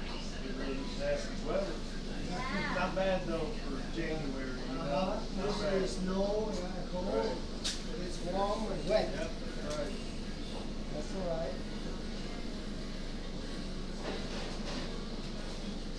{"title": "Wayne, Indianapolis, IN, USA - Chinese Restaurant", "date": "2017-01-16 18:13:00", "description": "Binaural recording inside a Chinese restaurant.", "latitude": "39.72", "longitude": "-86.22", "altitude": "213", "timezone": "GMT+1"}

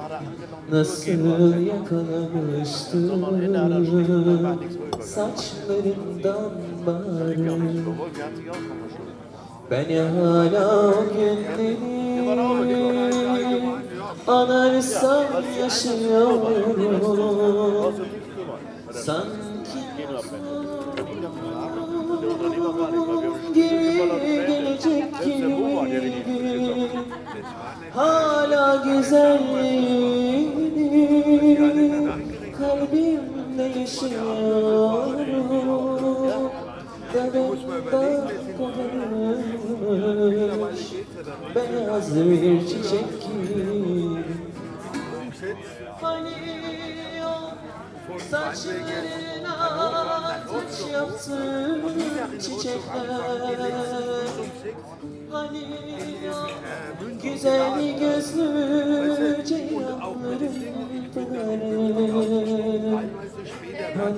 {"title": "Neukölln, Berlin, Germany - Happy Birthday and other Turkish music, Loky Garten restaurant", "date": "2012-07-29 21:30:00", "description": "9.30, a cold evening, not so many patrons, PA system with oddly inappropriate volume changes. Largish open air Turkish restaurant in an seemingly deserted factory area. I was attracted in by the singing, which reminded me of Istanbul and Turkish tea. Strange place, strange atmosphere. No baklava!", "latitude": "52.48", "longitude": "13.46", "timezone": "Europe/Berlin"}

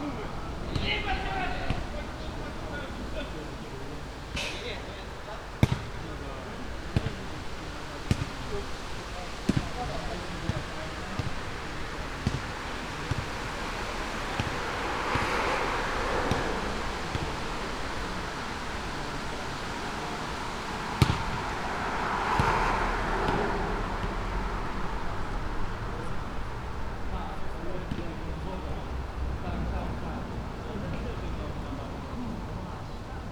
{"title": "Poznan, Wilda district, Przemyslowa Street - Jerzy Kurczewski square", "date": "2015-09-11 20:54:00", "description": "men playing basketball late in the evening. a different group talking nearby. (sony d50)", "latitude": "52.39", "longitude": "16.92", "altitude": "77", "timezone": "Europe/Warsaw"}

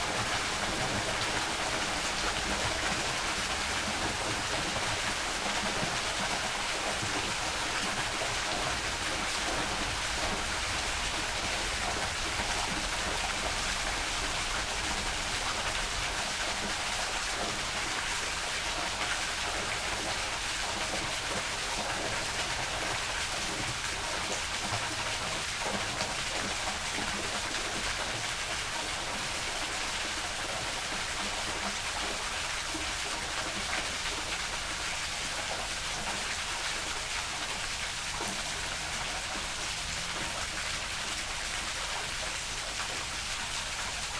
{"title": "Domain du Schlassgoard, Esch-sur-Alzette, Luxemburg - river Alzette, water inflow", "date": "2022-05-11 20:40:00", "description": "some drainage into river Alzette, which runs in a concrete canal. Inflow decreases suddenly\n(Sony PCM D50, Primo Em272)", "latitude": "49.50", "longitude": "5.99", "altitude": "286", "timezone": "Europe/Luxembourg"}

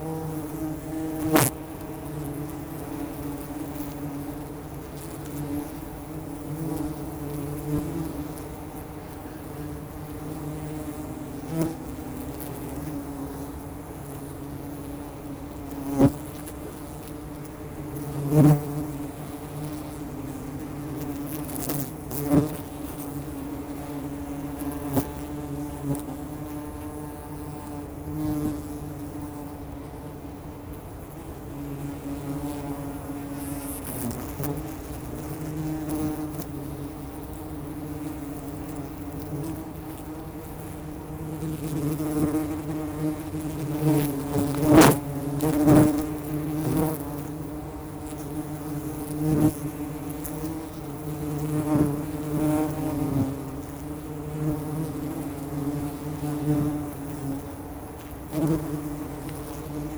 {"title": "La Londe, France - Wild bees", "date": "2016-09-19 10:00:00", "description": "Wild bees are digging holes in the ground of the forest.", "latitude": "49.32", "longitude": "0.96", "altitude": "88", "timezone": "Europe/Paris"}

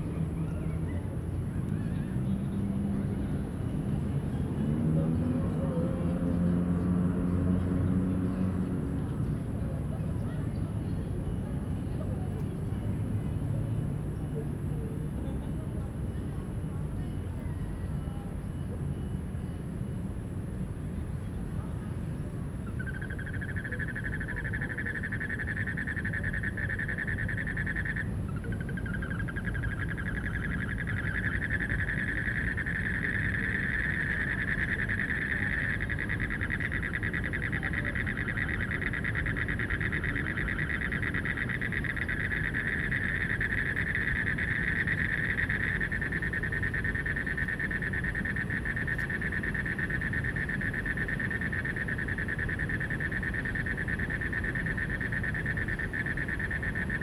{"title": "大安森林公園, 大安區, Taipei City - Frogs chirping", "date": "2015-06-26 22:20:00", "description": "Small ecological pool, Frogs chirping, in the Park, Traffic noise\nZoom H2n MS+XY", "latitude": "25.03", "longitude": "121.54", "altitude": "20", "timezone": "Asia/Taipei"}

